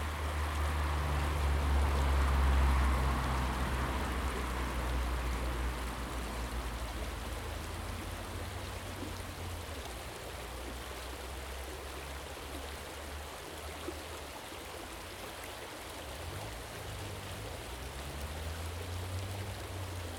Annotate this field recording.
A little water stream running down close by Dunmurry Industrial State